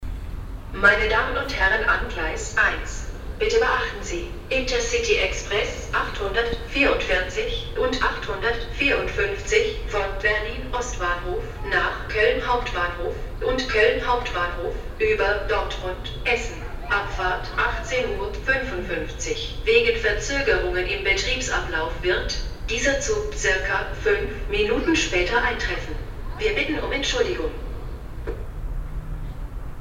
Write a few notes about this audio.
lautsprecheransage, soundmap nrw, - social ambiences, topographic field recordings